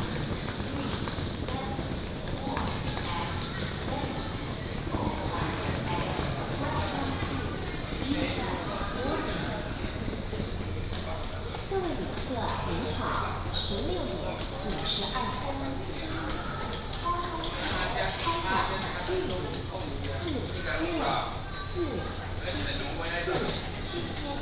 Taitung Station, Taiwan - In the station
Walking In the station